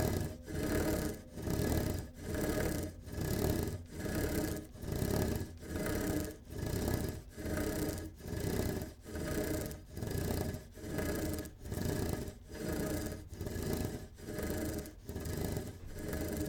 Rue de l'Église, Manou, France - Manou - Église St-Pierre de Manou
Manou (Eure-et-Loir)
Église St-Pierre de Manou
La volée manuelle
France métropolitaine, France, 14 November 2019